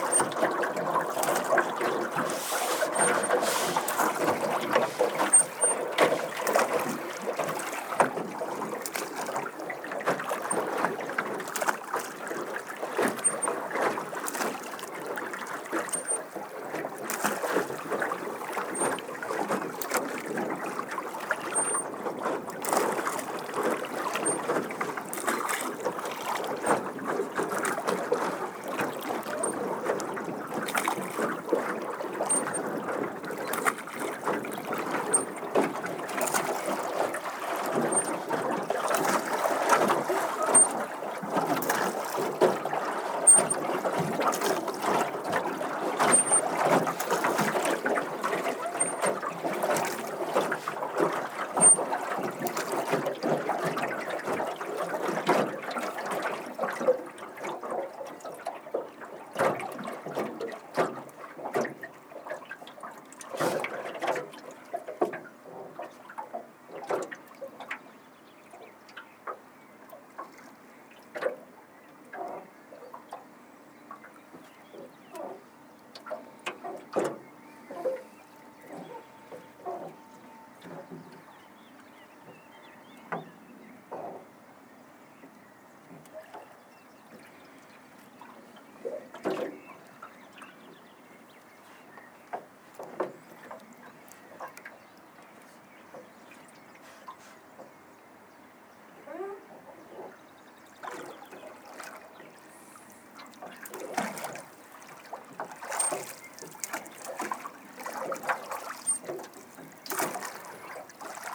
tondatei.de: nonnenhorn, paddeln, bodensee
ruderboot, see, wasser, plätschern